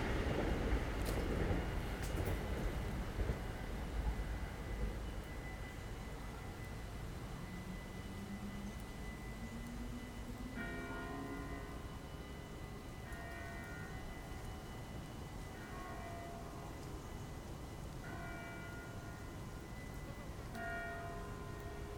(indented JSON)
{
  "title": "Leuven, Belgique - Distant bells",
  "date": "2018-10-13 17:00:00",
  "description": "Distant bells from the Heverlee church, two trains, a lot of acorns falling and bicycles circulating on it.",
  "latitude": "50.86",
  "longitude": "4.70",
  "altitude": "35",
  "timezone": "Europe/Brussels"
}